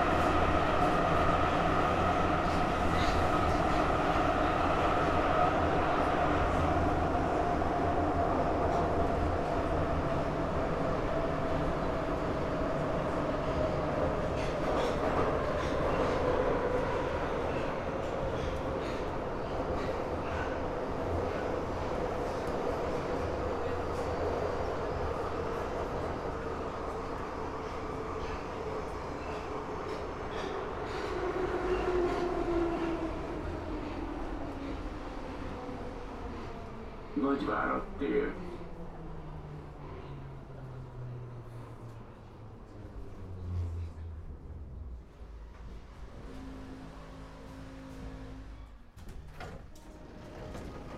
Budapest, Metro - Drive to the airport
The metro is driving into the station, entering the metro, the ride from Deak Ferenc Ter to the last station in the direction to the airport. Tascam DR-100, recorded with the build in microphone.